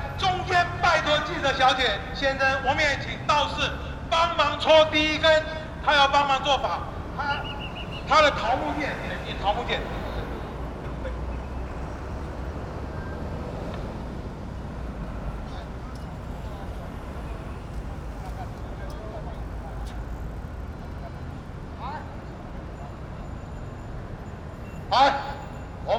Zhongzheng District, Taipei - labor protests
labor protests, Sony PCM D50 + Soundman OKM II